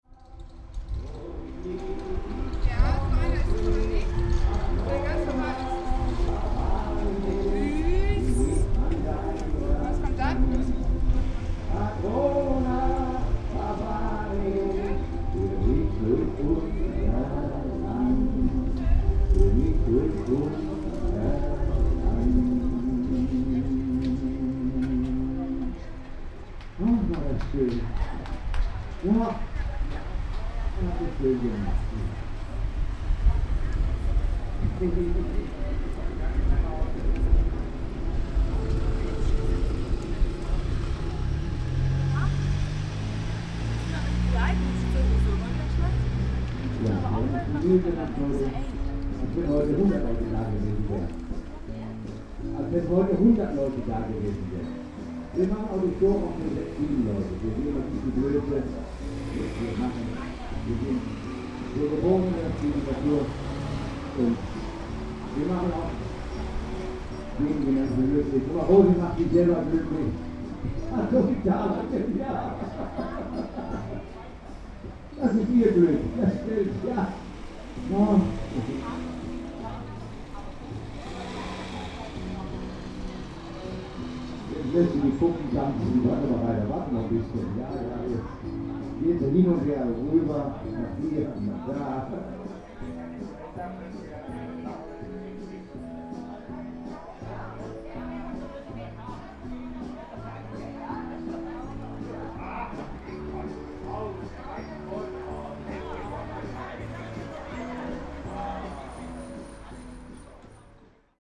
berlin, bürknerstraße: eckkneipe - the city, the country & me: pub
senior dancing at an old school pub
the city, the country & me: may 2008
21 August 2008